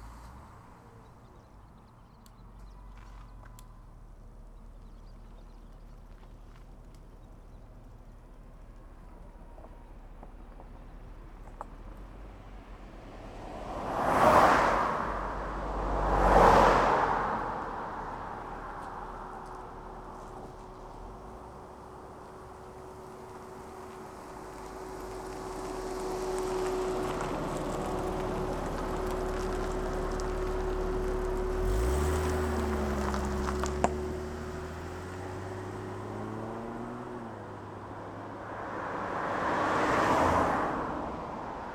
Berlin Wall of Sound, Osdorfer Strasse 080909
Diedersdorfer Heide und Großbeerener Graben, Großbeeren / OT Osdorf, Germany